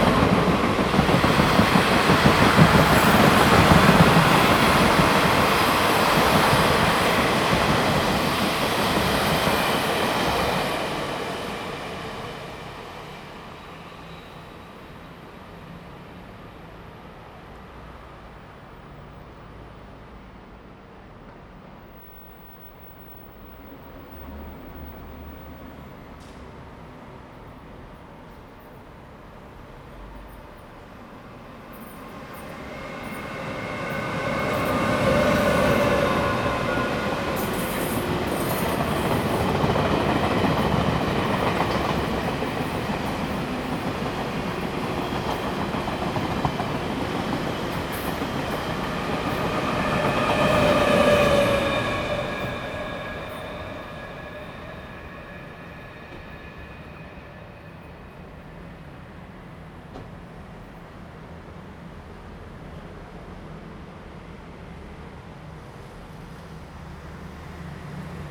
Sec., Dongmen Rd., East Dist., Tainan City - Train traveling through
Next to the railway, Traffic sound, Train traveling through
Zoom H2n MS+XY